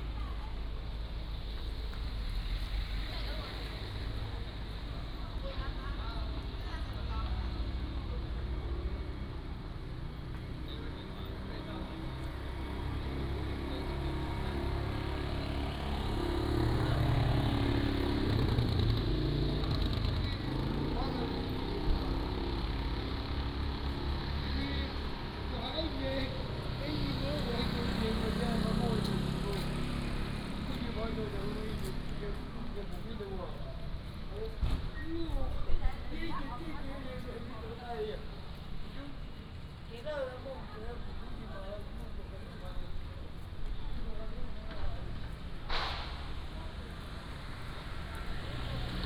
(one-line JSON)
{"title": "Nangan Township, Taiwan - In the Street", "date": "2014-10-14 15:49:00", "description": "In the Street, small village", "latitude": "26.16", "longitude": "119.95", "altitude": "9", "timezone": "Asia/Taipei"}